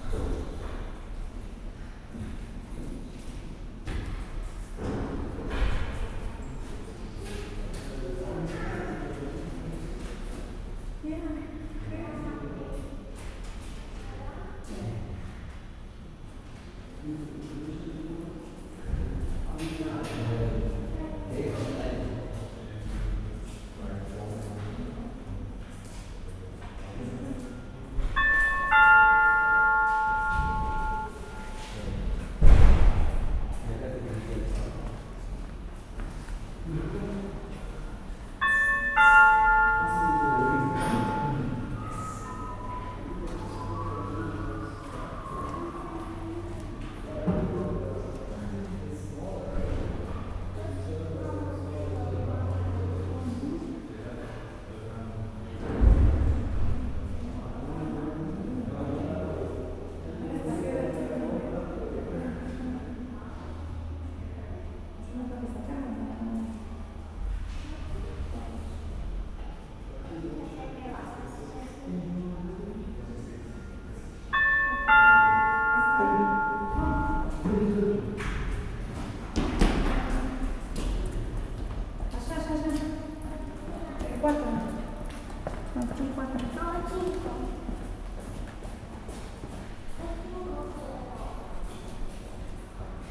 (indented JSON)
{
  "title": "Diesterwegstraße, Berlin, Germany - Bezirksambt Pankow: waiting to register my apartment",
  "date": "2019-10-15 13:12:00",
  "description": "Waiting to register one's address in the local council offices can take a while. Here you sit on worn metal chairs in a very long, spartan corridor with a ceiling so high binoculars are needed to see it properly. People walk or shuffle up and down, doors open and close mysteriously with a thump. The sound reverberates into the building's depth. You are hushed by the atmosphere. Time passes slowly. Hope arrives as a loud, but friendly, electronic 'ding dong' that announces the next appointment number displayed in red on a bright white screen high above. My moment is here. Everything goes very smoothly. I am now officially in Berlin with a bang up to date registration. Something I should have done 8 years ago.",
  "latitude": "52.54",
  "longitude": "13.43",
  "altitude": "51",
  "timezone": "Europe/Berlin"
}